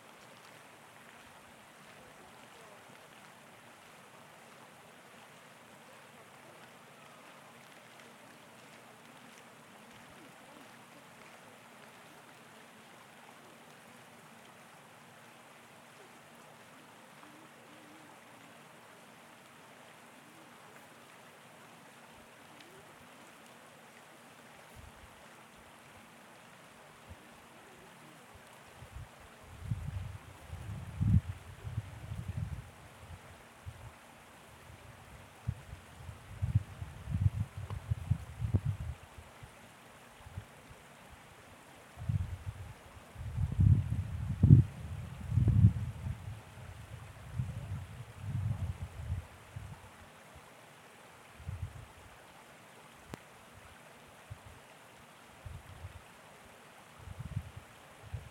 Notley Pl, East York, ON, Canada - Winter Riparian Soundscape
Winter recording from one of the many bridges spanning Taylor-Massey Creek. Apologies for the considerable wind noise further on; for some reason I totally forgot to put the foamie on the recorder!